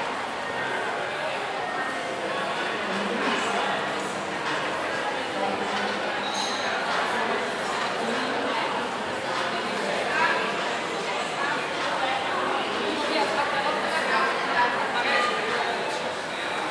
L'Aquila, Centro comm. L'Aquilone - 2017-06-08 01-L'Aquilone